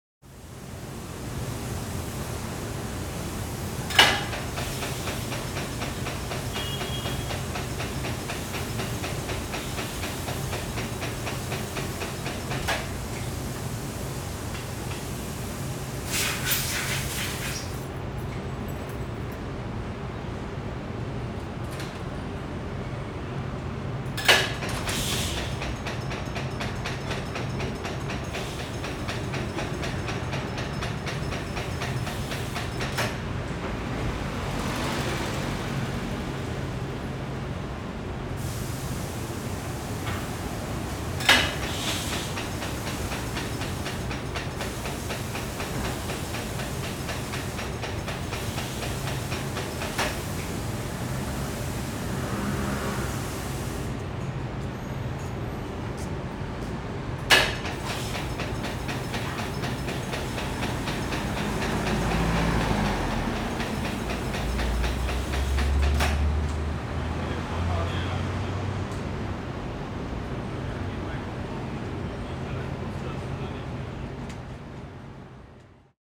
{
  "title": "Ln., Wuhua St., Sanchong Dist., New Taipei City - Sound from Factory",
  "date": "2012-03-15 09:56:00",
  "description": "In the alley, Sound from Factory\nRode NT4+Zoom H4n",
  "latitude": "25.08",
  "longitude": "121.49",
  "altitude": "14",
  "timezone": "Asia/Taipei"
}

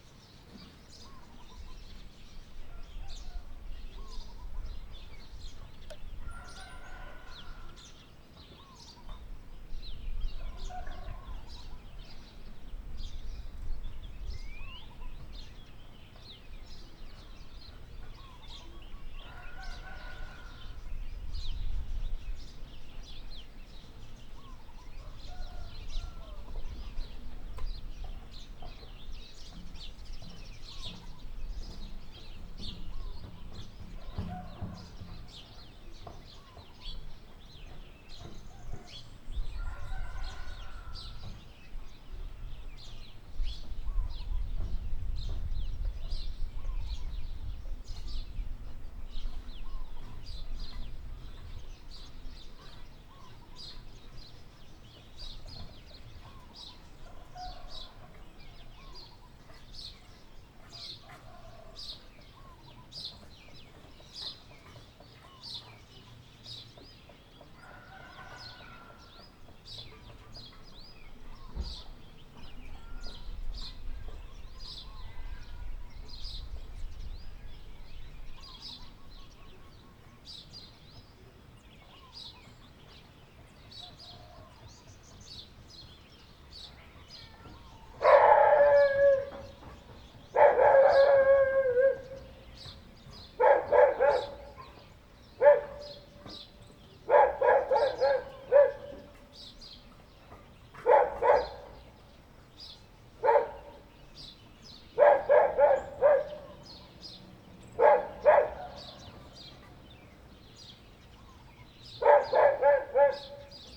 {
  "title": "Route du Bras des Étangs CILAOS - CILAOS le matin entre deux concerts dhélicoptères",
  "date": "2020-02-10 07:51:00",
  "description": "CILAOS le matin entre deux concerts d'hélicoptères (en ce moment il n'y en a pas trop, pas de touristes chinois)",
  "latitude": "-21.14",
  "longitude": "55.47",
  "altitude": "1190",
  "timezone": "Indian/Reunion"
}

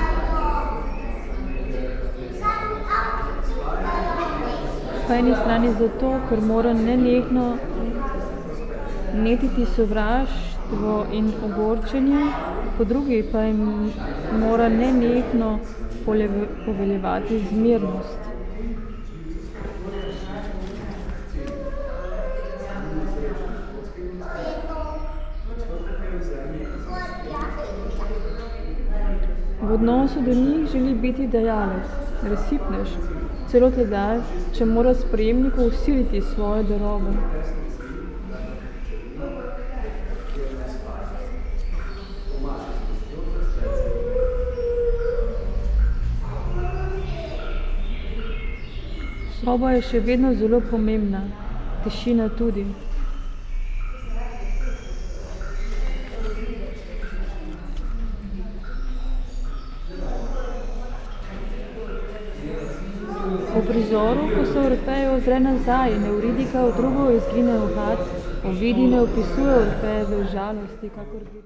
{
  "title": "Secret listening to Eurydice, Celje, Slovenia - Public reading 7 in Likovni salon Celje",
  "date": "2012-12-20 19:04:00",
  "description": "time fragment from 30m10s till 32m32s of one hour performance Secret listening to Eurydice 7 and Public reading, on the occasion of exhibition opening of artist Andreja Džakušič",
  "latitude": "46.23",
  "longitude": "15.26",
  "altitude": "241",
  "timezone": "Europe/Ljubljana"
}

